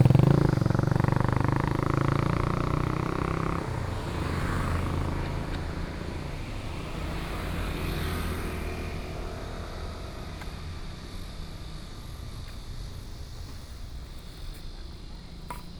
Linsen Rd., Yilan City - walking on the Road
walking on the Road, Traffic Sound, Hot weather
Sony PCM D50+ Soundman OKM II